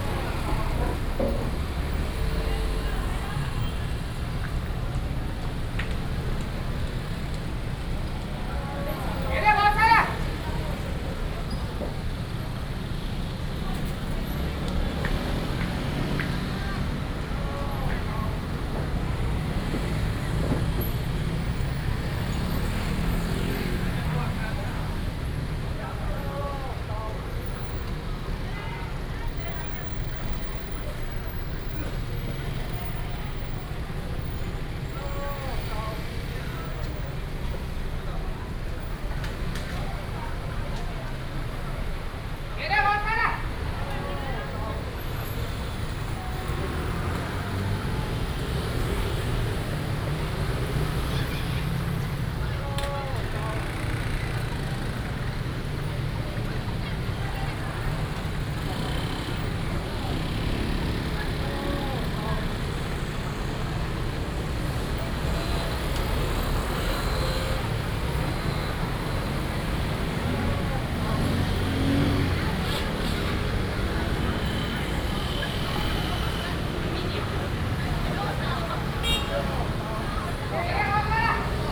Songjiang St., Banqiao Dist., New Taipei City - At the junction
At the junction, Cries of street vendors, traffic sound
New Taipei City, Taiwan